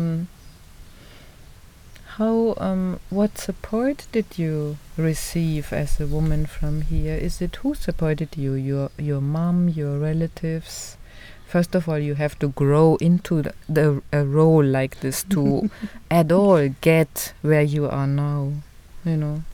in the grounds of Tusimpe Mission, Binga - i am a girl from Binga...
...we are sitting with Chiza Mwiinde in front of a large hut on the grounds of Tusimpe Catholic Mission the thatched roof offers a nice shade to linger and hides us just about enough from a strong wind which is – as you’ll hear – playing wildly with the dry leaves and bushes around Chiza was born in Binga, a place at the back of beyond as some say, and is now studying geo-sciences at Smith College in the US. We worked together at the local womens organisation Zubo Trust, Chiza as an Intern, me as a multimedia volunteer. I was intrigued by her art of storytelling, especially about rocks, her research so I enticed her into this long interview to share her story with us, her journey as a girl from rural Binga becoming a woman geo-scientist .